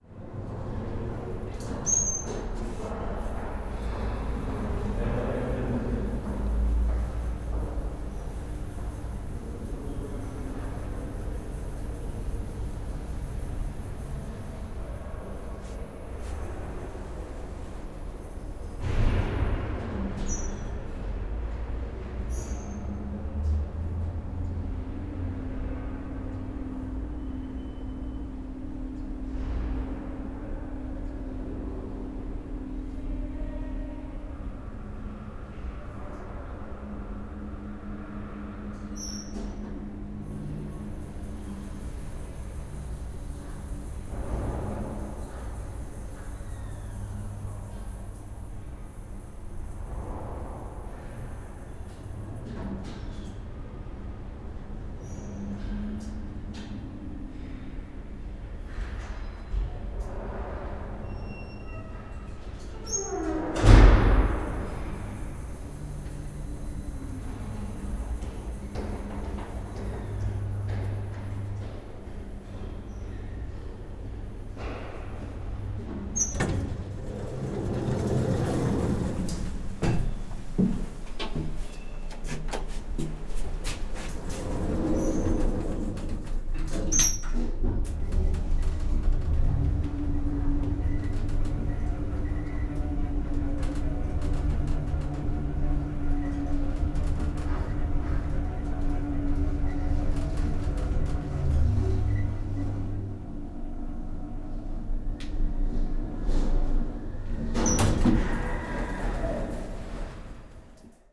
the city, the country & me: february 18, 2013